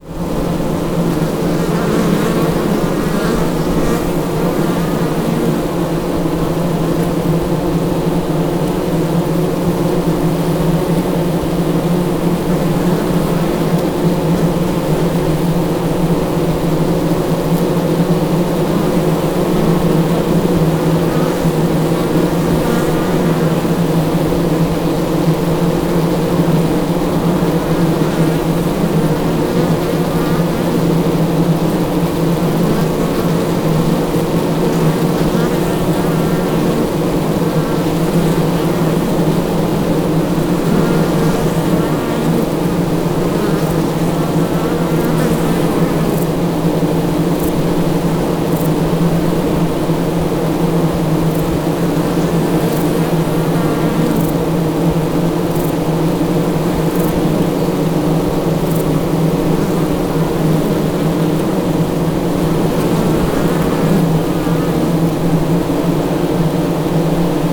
shotgun at the entrance of the bee house, world listening day, recorded together with Ginte Zulyte.